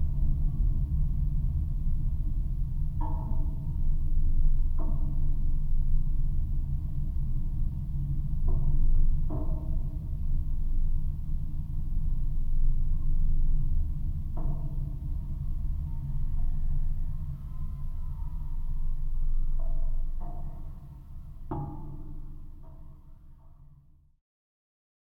Vasaknos, Lithuania, metallic stage
some kind of summer concert state on a water. geophone on the construction